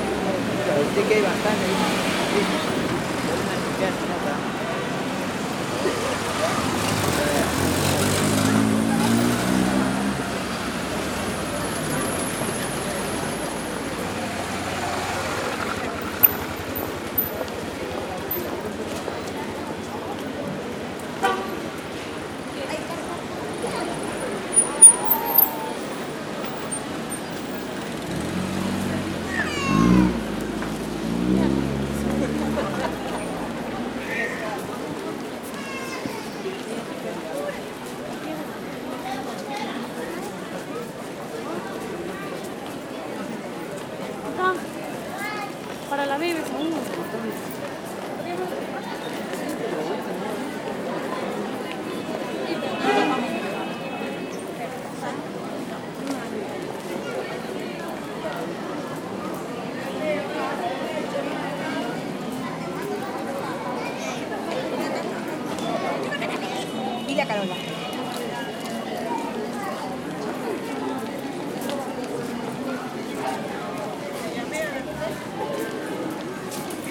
jiron Unión Lima. Perú
principal street on downtown. Very commercial place.